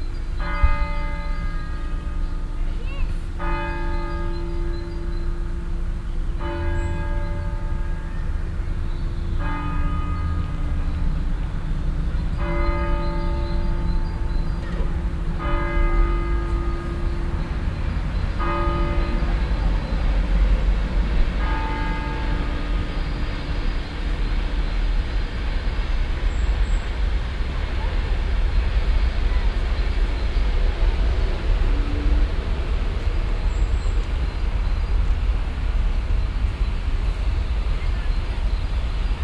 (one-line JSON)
{"title": "cologne stadtgarten, kinderspielplatz platz - cologne, stadtgarten, kinderspielplatz platz 2", "date": "2008-05-07 20:57:00", "description": "klang raum garten - field recordings", "latitude": "50.94", "longitude": "6.94", "altitude": "55", "timezone": "Europe/Berlin"}